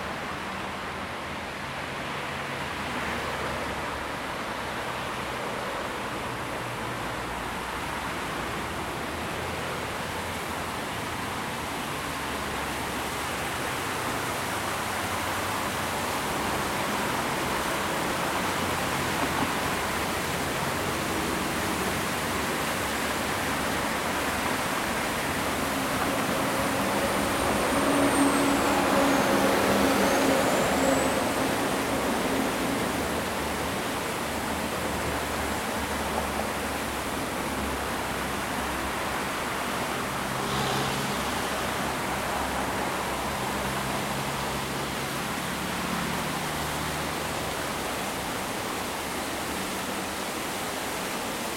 Soundwalk from one museum (HdG) to the other museum beneath (Staatsgalerie) and back.
Stuttgart, Deutschland, 15 August 2011, 11:30